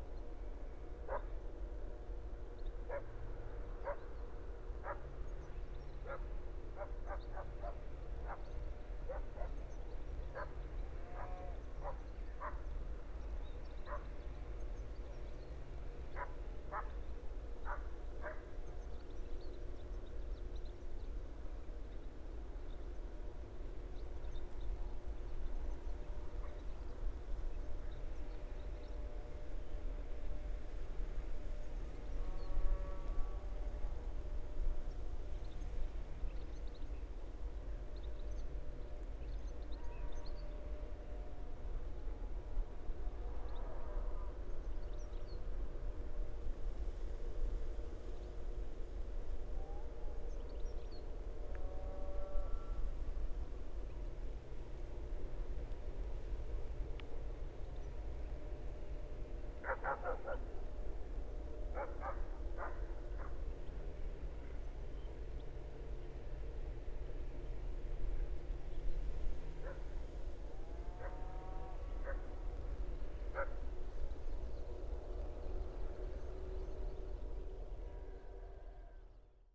in the countryside, Lithuania
just short stop documenting autumnal countryside